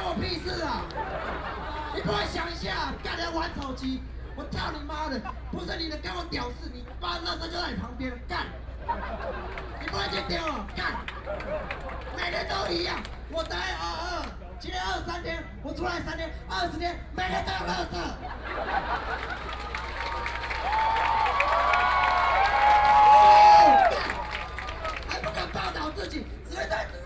Taipei City, Taiwan - Profanity
People and students occupied the Legislature Yuan, The night before the end of the student movement, A lot of students and people gathered in front of the Plaza, Post to complain against the student movement during, Very special thing is to require the use of such profanity as the content
9 April 2014